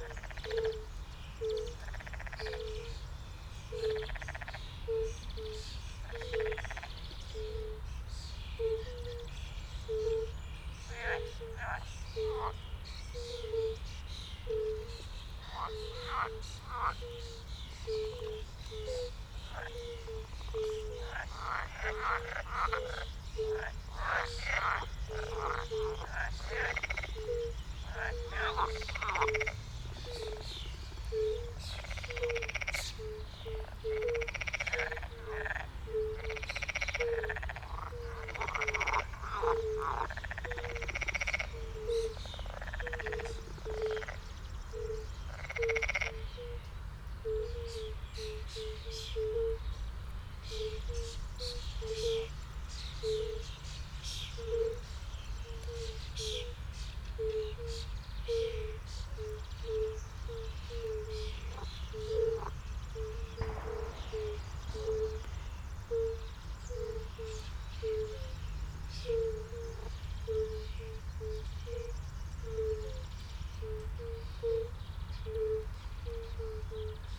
ROD Bażant, Aleja Spacerowa, Siemianowice Śląskie - firebelly toads, shots
small pond, nature reserve, the gentle sound of fire-bellied toads (Bombina bombina), a River warbler, unavoidable shooting from nearby, surrounding traffic drone
(Sony PCM D50, DPA4060)
Siemianowice Śląskie, Poland